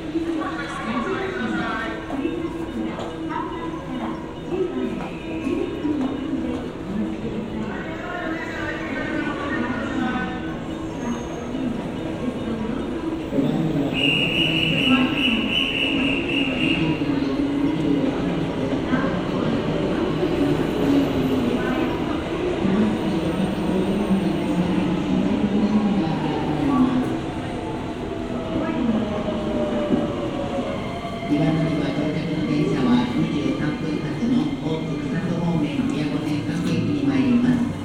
Title: 201811302220 JR Kyoto Station Kosei Line Platform
Date: 201811302220
Recorder: Zoom F1
Microphone: Roland CS-10EM
Location: Kyoto, Kyoto, Japan
GPS: 34.985487, 135.759484
Content: binaural trains platform people coughing noise alarm approaching kyoto japan japanese warning station night